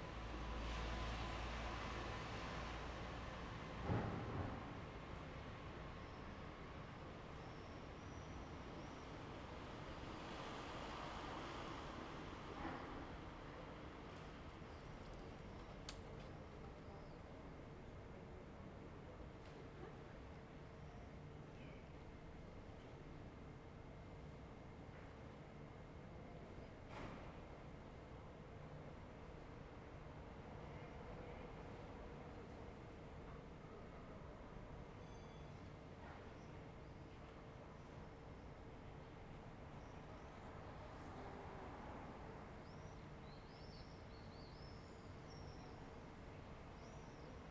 "Three ambiances April 27th in the time of COVID19" Soundscape
Chapter LVIII of Ascolto il tuo cuore, città. I listen to your heart, city
Monday April 27th 2020. Fixed position on an internal terrace at San Salvario district Turin, forty eight days after emergency disposition due to the epidemic of COVID19.
Three recording realized at 11:00 a.m., 6:00 p.m. and 10:00 p.m. each one of 4’33”, in the frame of the project (R)ears window METS Cuneo Conservatory) (and maybe Les ambiances des espaces publics en temps de Coronavirus et de confinement, CRESSON-Grenoble) research activity. Similar was on April 25th
The three audio samplings are assembled here in a single audio file in chronological sequence, separated by 7'' of silence. Total duration: 13’53”
Torino, Piemonte, Italia